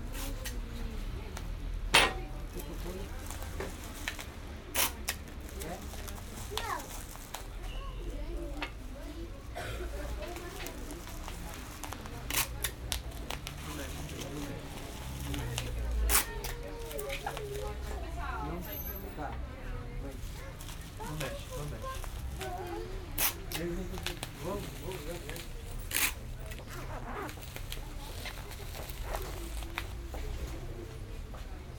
Sao Paulo, Liberdade, household supply store
- Liberdade, São Paulo, Brazil